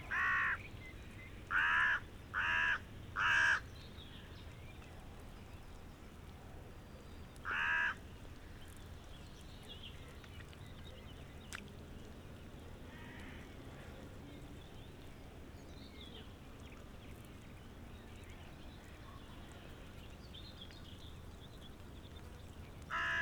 Schönhausen, Elbe - crows
seems the crows became a bit nervous about the recordist's presence.
(SD702, Audio Technica BP4025)